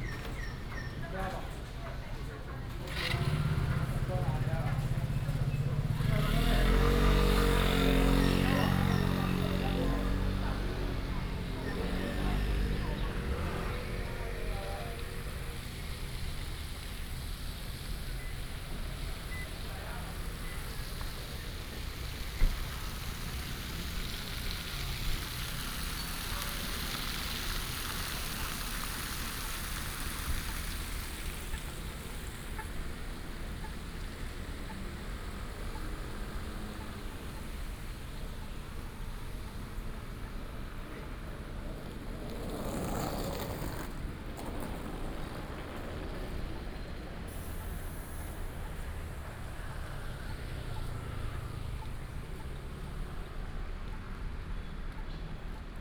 From the station platform, To the station exit, Across the square in front of the station
Changhua County, Taiwan, 19 January